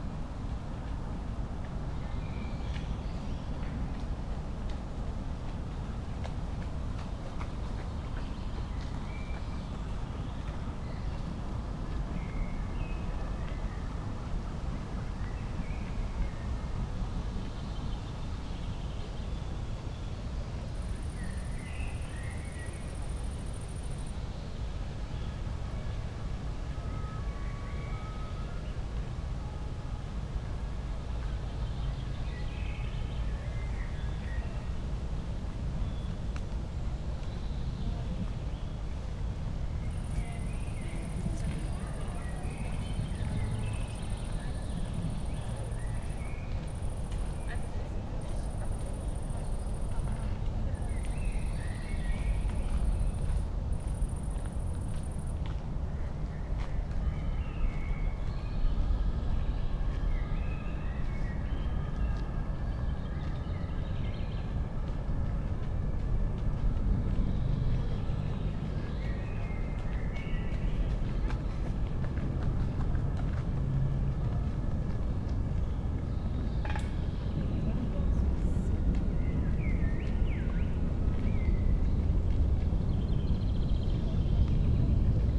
Adenauerweiher, Stadtwald Köln - evening ambience at pond
Stadtwald Köln, at pond Adenauerweiher, ambience /w joggers, a cricket, an aircraft and distant Autobahn traffic noise
(Sony PCM D50, Primo EM172)